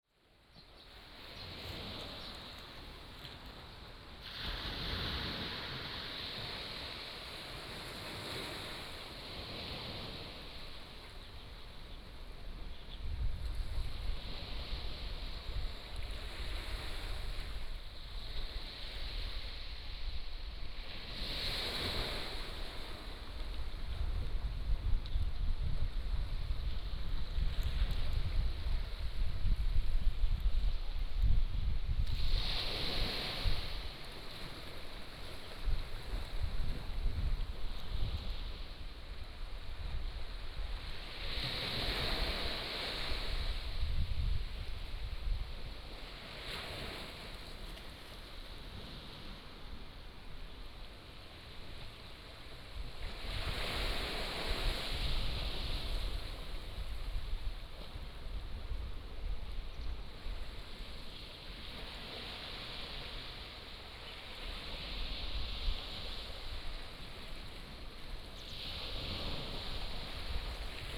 橋仔村, Beigan Township - Small beach
Small village, Small beach, Sound of the waves, Birds singing